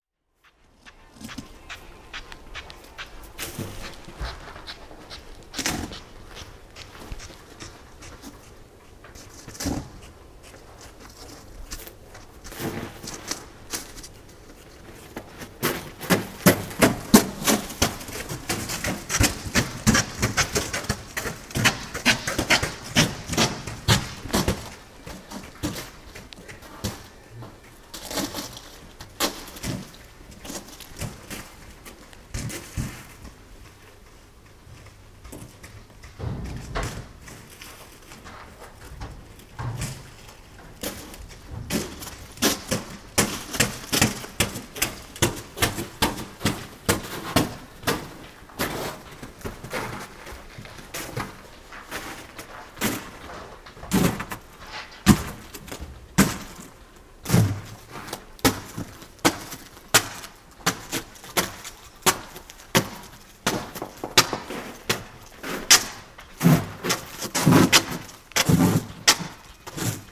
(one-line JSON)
{"date": "2009-01-16 21:42:00", "description": "cracking snow in Stadt Wehlen: Germany", "latitude": "50.95", "longitude": "14.03", "altitude": "138", "timezone": "Europe/Berlin"}